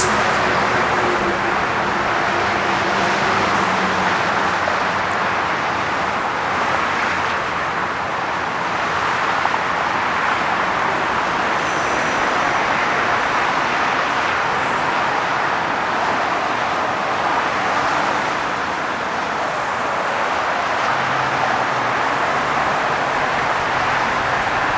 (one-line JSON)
{"title": "101 Freeway, downtown Los Angeles", "date": "2010-10-21 12:30:00", "description": "freeway, traffic, Los Angeles, auto, downtown", "latitude": "34.06", "longitude": "-118.24", "altitude": "92", "timezone": "America/Los_Angeles"}